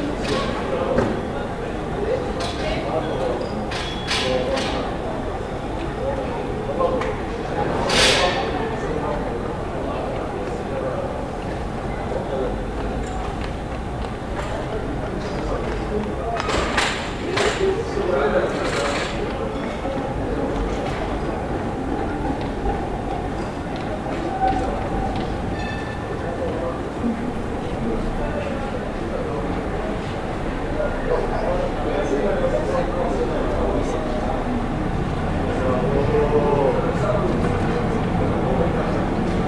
Jakoministraße, Graz, Österreich - Sunny Saturday
You can hear people talking, birds, traffic on this sunny saturday afternoon. It was the first day that all shops were allowed to open again after the first long Lockdown for COVID-19 in Austria.
Steiermark, Österreich, 2 May, 17:29